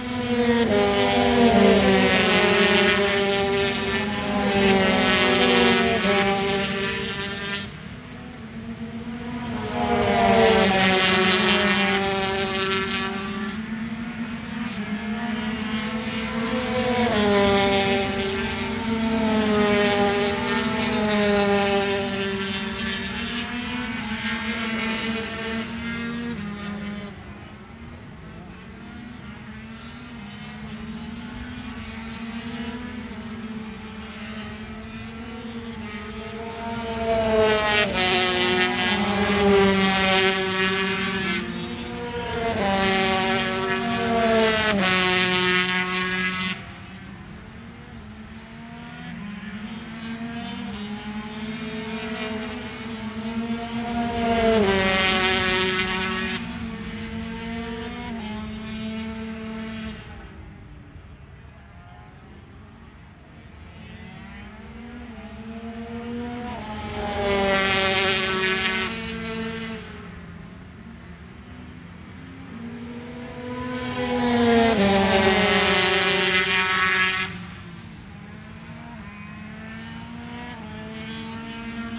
Unnamed Road, Derby, UK - British Motorcycle Grand Prix 2004 ... 125 free practice ... contd ...
British Motorcycle Grand Prix 2004 ... 125 free practice ... contd ... one point stereo mic to minidisk ... date correct ... time optional ...
23 July, 09:30